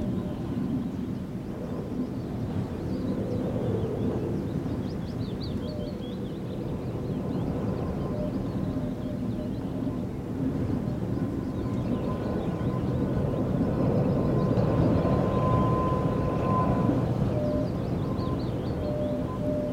Kirkby Stephen, UK - Singing Viaduct
Multi-channel recording of Smardale Gill Viaduct, a disused railway viaduct in Smardale Gill nature reserve. The stantions on the viaduct whistle as the wind blows across them. Recorded on a sunny and windy mid May afternoon. No people around but a large raven can be heard flying around the valley. Part of a series of recordings for A Sound Mosaic of the Westmorland Dales. Peral M-s stereo mic, 2 x DPA 4060 inside two stantions, 2 x Barcus Berry contact mics on a wire mesh attached to the stantions
England, United Kingdom, 17 May 2022